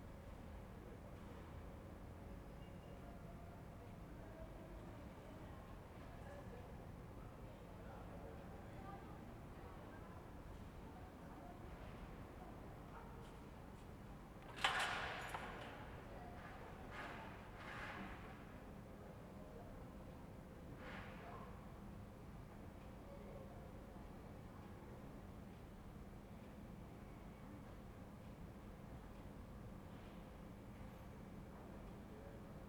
"Round five p.m. terrace with violin, bells and barking Lucy in the time of COVID19": soundscape.
Chapter CLXXIV of Ascolto il tuo cuore, città. I listen to your heart, city
Wednesday, May 20th, 2021. Fixed position on an internal terrace at San Salvario district Turin. A violin is exercising in the south, shortly after 5 p.m. the bells ring out and Lucy barks and howls, as is her bad habit. More than one year and two months after emergency disposition due to the epidemic of COVID19.
Start at 4:53: p.m. end at 5:24 p.m. duration of recording 30’43”
26 May, 16:53